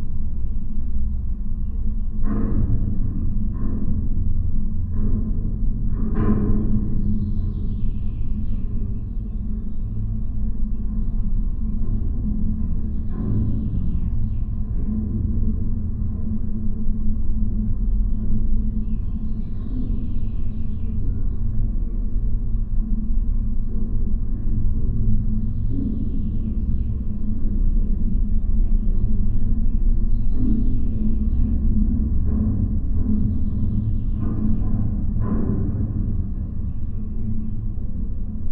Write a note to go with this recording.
Birstonas observation tower - 50 meters high metallic building. Contact microphones recording.